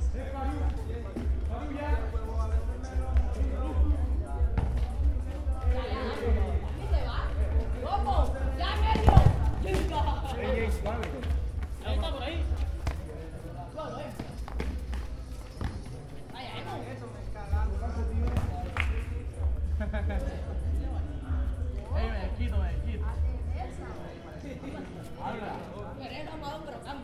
Cartagena, Barrio Getsemaní, Paisaje Sonoro Partido de Fútbol
El popular y tradicional picadito de fútbol, un partido con jugadores y canchas improvisadas, en calles, plazas, parques o potreros. Aquí una calurosa noche de enero. 10 pm en Cartagena
12 February, ~00:00